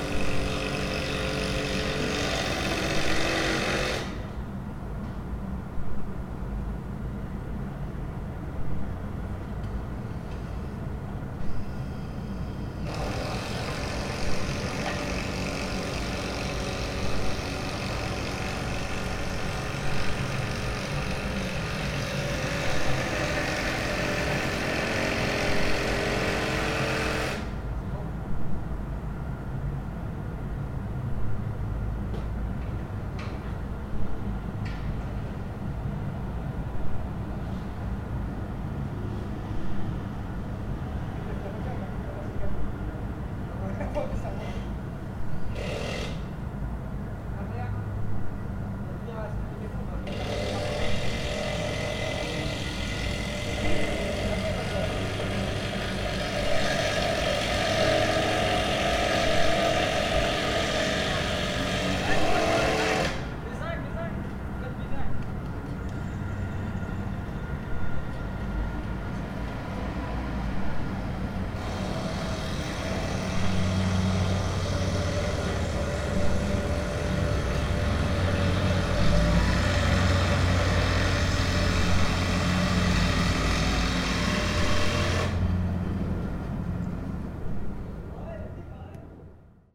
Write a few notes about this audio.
Workers on a roof in a little street. Recorded from 2nd floor with H4…